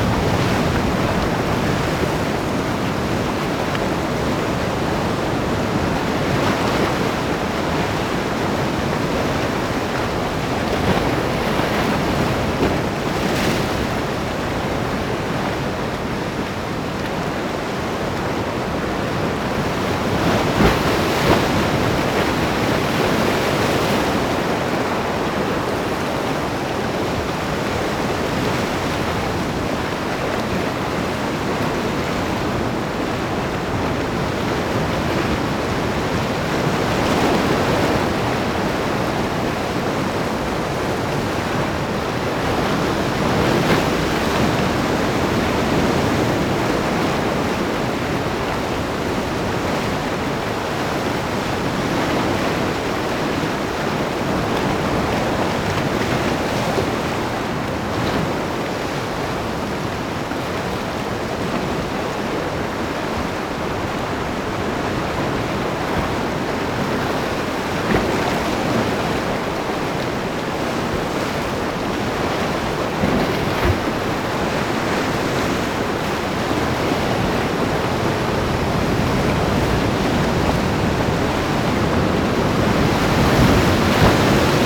This recording was made in a place named El Charco Azul. Up in an artificial wall that limits the pool and the ocean. In that pools the local artisan live some time the winker to soft it before manipulate. Whith that soft winker they make different useful objects as baskets o big saddlebags used in agriculture.